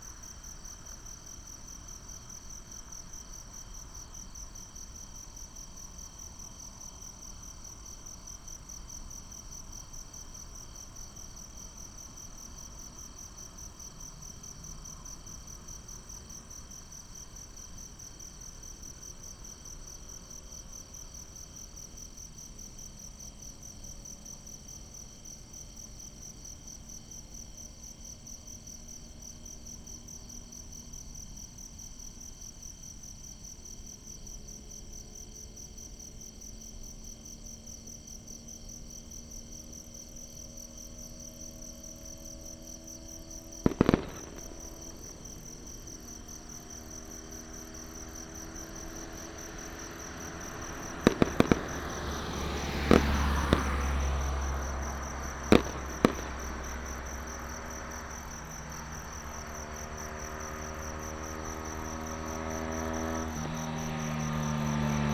Next to the farm, Insects sound, Traffic sound, CFirecrackers and fireworks, Binaural recordings, Sony PCM D100+ Soundman OKM II
Shalu District, 中75鄉道, 9 October 2017